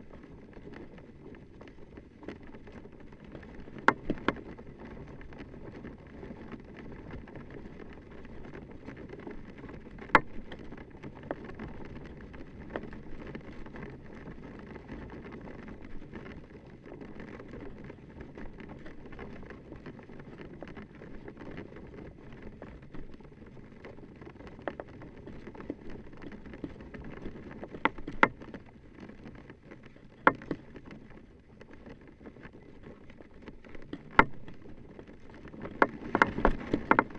Dual contact microphone recording of a small flag-pole near an entrance to a gas station store. The wind is turning the flag sideways, rotating a plastic pole in it's socket. Recorded using ZOOM H5.